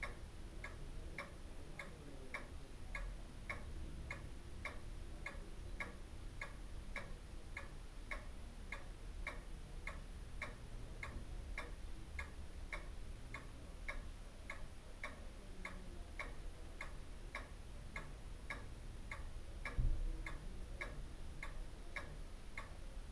Meadow Way, Didcot, UK - front room ambience ...
front room ambience ... recorded with olympus ls 14 integral mics ... ticking of a wall mounted pendulum clock ... my last visit to what was our family home ... my brother and myself had spent sometime together clearing the remnants ... here's to jack and babs ... no sadness in our memories of you ... bless you folks ...